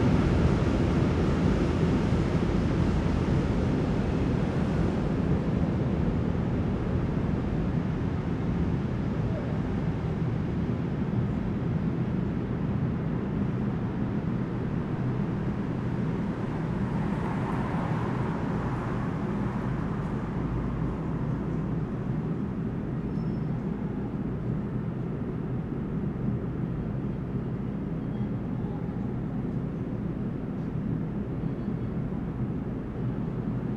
Köln, Hans-Böckler-Platz
night ambience, pedestrians, bikers, cars and trains